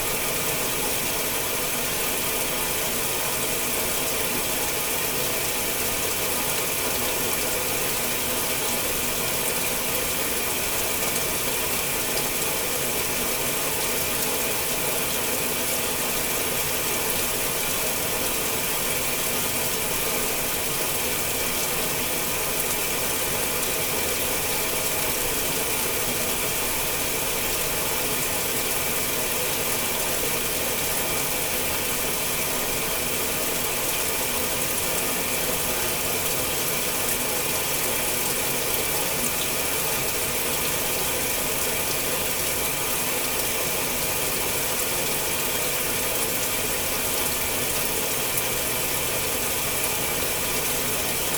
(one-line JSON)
{"title": "East Austin, Austin, TX, USA - Woodshop Laundry", "date": "2015-07-20 23:00:00", "description": "Recorded with a pair of DPA 4060s and a Marantz PMD661.", "latitude": "30.28", "longitude": "-97.72", "altitude": "188", "timezone": "America/Chicago"}